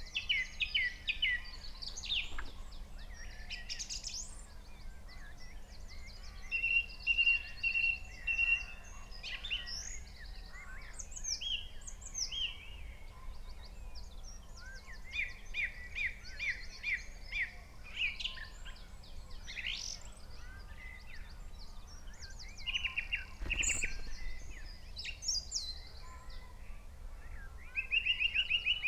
Green Ln, Malton, UK - song thrush soundscape ...
song thrush soundscape ... xlr mics in a SASS on tripod to Zoom H5 ... bird calls ... song ... from ... pheasant ... blackbird ... red-legged partridge ... grey partridge ... skylark ... crow ... tawny owl ... wood pigeon ... robin ... dunnock ... yellowhammer ... long-tailed tit ...plus background noise ... the skies are quiet ...
England, United Kingdom, 4 April 2020, 05:30